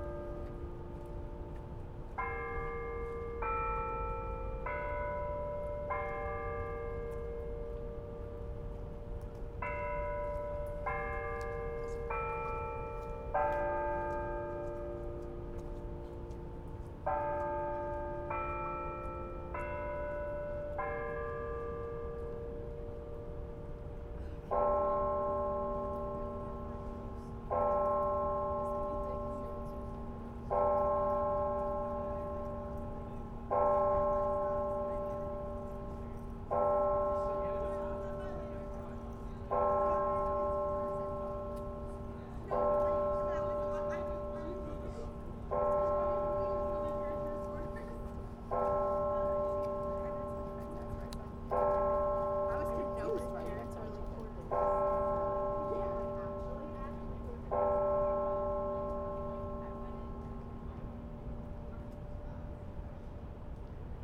Muhlenberg College, West Chew Street, Allentown, PA, USA - Haas Bell Tower from Parents' Plaza
The sound of the Muhlenberg College Haas Bell Tower outside the student union building. Students can be heard moving between classes in the rain.
3 December, ~12:00